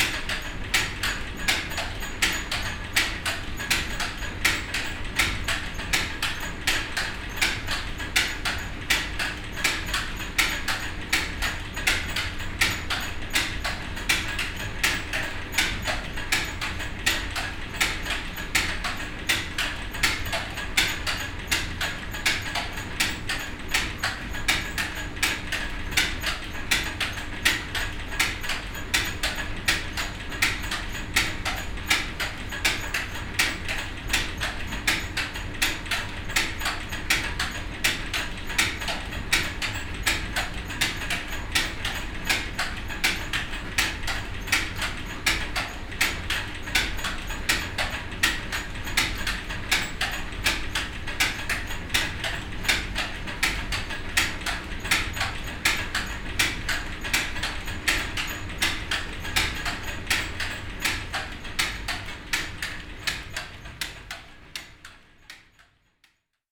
enscherange, rackesmillen, tooth belt drive
Inside the historical mill, in a room at the ground floor directly behind the mills running water wheel. The sound of the tooth bell drive running with a constructed imbalance here.
Enscherange, Rackesmillen, Zahnradantrieb
In der historischen Mühle in einem Raum im Erdgeschß direkt hinter dem laufenden Mühlenwasserrad. Der Klang eines konstruktionsweise unbalancierten Zahnriemenantriebs.
À l’intérieur du moulin historique, dans une salle au rez-de-chaussée, directement derrière la roue à aubes du moulin Le son de la courroie qui tourne avec un déséquilibre voulu pour faire fonctionner le mécanisme au premier étage. À l’étage sous le toit du vieux moulin. Le bruit extérieur du moulin à farine.
À l’étage sous le toit du vieux moulin. Le bruit du mécanisme intérieur du moulin à farine.
23 September 2011, ~19:00